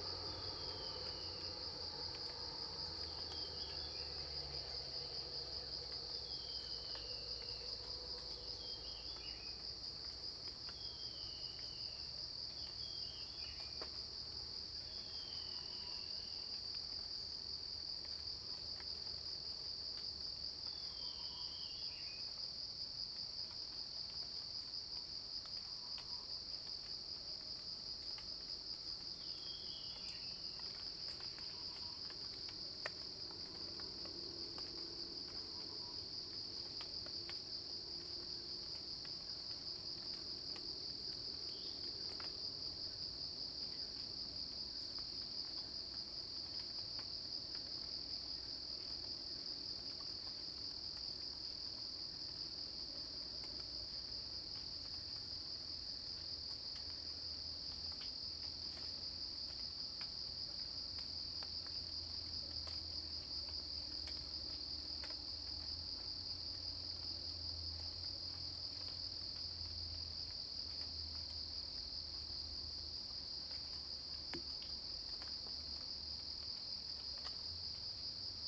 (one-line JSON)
{"title": "Shuishang Ln., Puli Township 桃米里 - Insects called", "date": "2016-09-19 05:44:00", "description": "Rain sound, Insects called\nZoom H2n MS+XY", "latitude": "23.93", "longitude": "120.91", "altitude": "679", "timezone": "Asia/Taipei"}